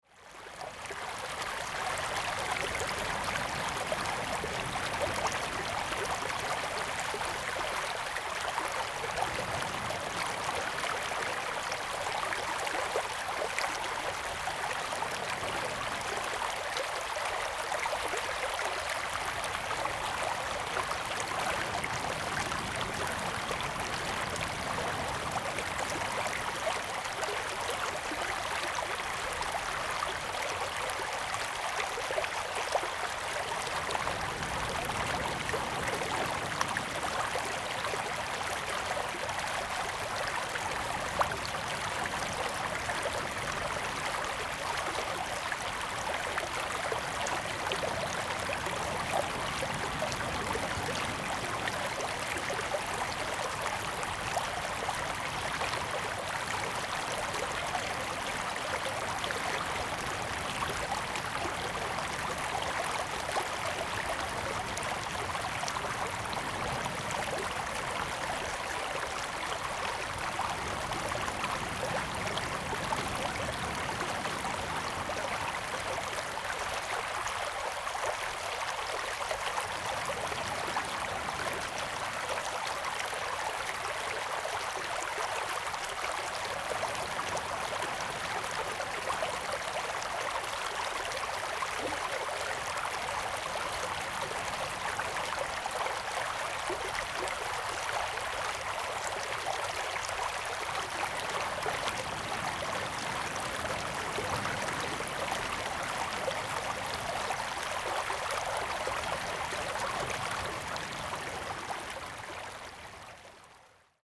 Streams and the sea, The weather is very hot
Zoom H2n MS+XY